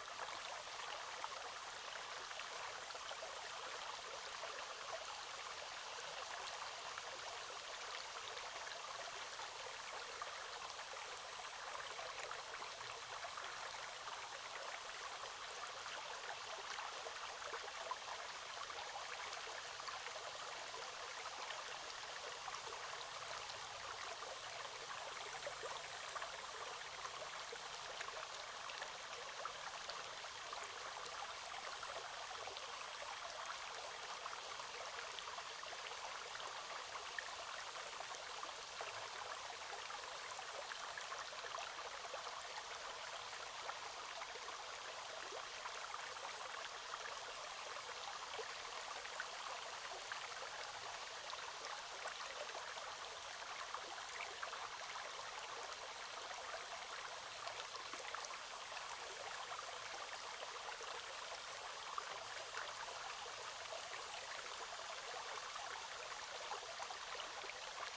Zhonggua River, 埔里鎮成功里 - Brook
Brook, small stream
Zoom H2n Spatial audio
Nantou County, Taiwan, 14 July 2016